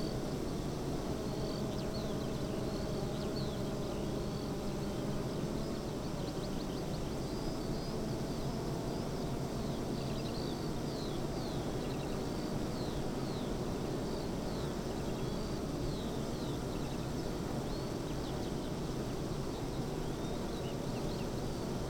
{"title": "Green Ln, Malton, UK - bee hives ...", "date": "2020-06-25 06:40:00", "description": "bee hives ... eight bee hives in pairs ... xlr SASS to Zoom H5 ... pollinating field of beans ..? produce 40lbs of honey per acre ..? bird song ... call ... skylark ... corn bunting ...", "latitude": "54.13", "longitude": "-0.56", "altitude": "105", "timezone": "Europe/London"}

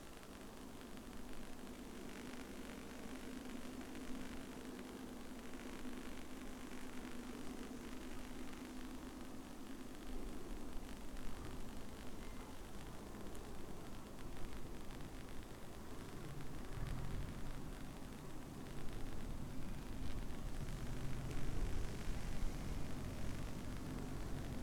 {"title": "crackling high voltage wires", "date": "2011-09-26 16:40:00", "description": "actually they are difficult to record, cause high voltage field around just makes my to act in wild ways, overload...", "latitude": "55.55", "longitude": "25.56", "altitude": "101", "timezone": "Europe/Vilnius"}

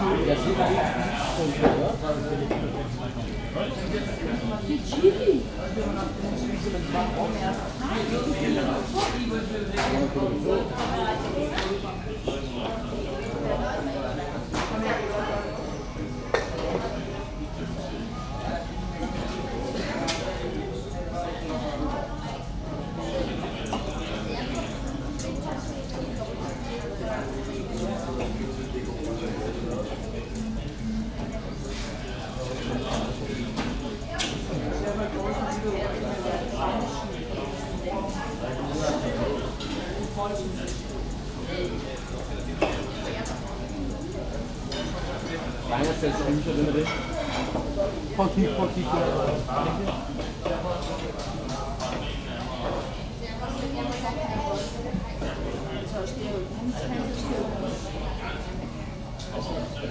{"title": "København, Denmark - Kebab restaurant", "date": "2019-04-15 19:00:00", "description": "Into the kebab snack restaurant, a lot of young people are discussing and joking. A teenager is phoning just near the microphones, inviting a friend to come to the barbecue.", "latitude": "55.67", "longitude": "12.59", "altitude": "2", "timezone": "Europe/Copenhagen"}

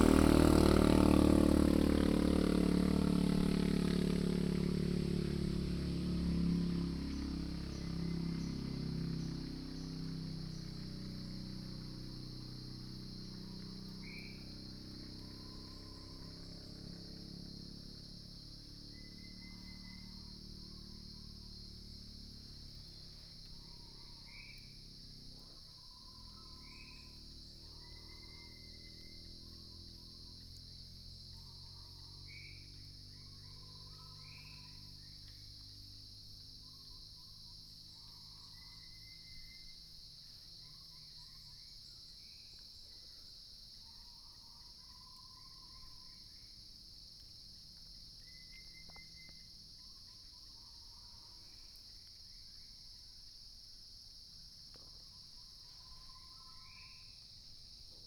{"title": "顏氏牧場, 桃米里 Puli Township - Morning in the mountains", "date": "2016-09-18 07:00:00", "description": "Morning in the mountains, birds sound, Cicadas sound", "latitude": "23.93", "longitude": "120.91", "altitude": "715", "timezone": "Asia/Taipei"}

